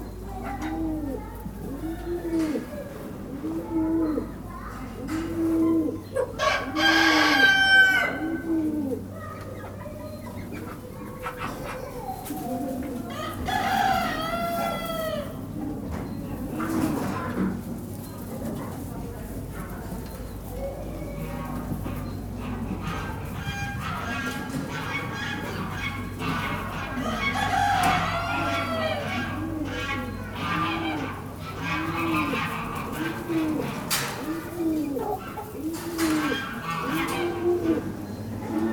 Via 1° Maggio, Bernate VA, Italia - Galli, galline e piccioni in concerto
11 December, ~15:00